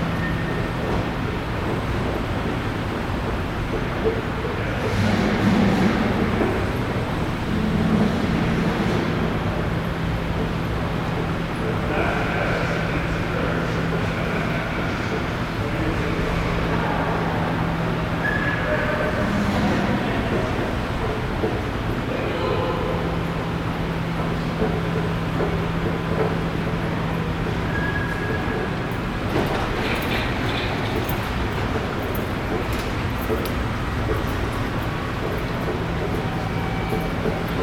Calgary +15 Ernst & Young lobby
escalators and other mechanical noise